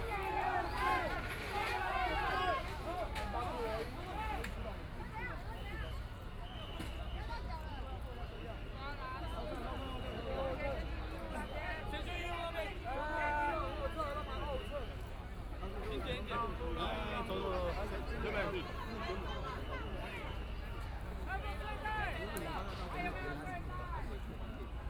Executive Yuan, Taipei City - occupied the Executive Yuan
Student activism, Walking through the site in protest, People and students occupied the Executive Yuan
The police are strongly expelled student
Zhongzheng District, Taipei City, Taiwan, 24 March, 05:02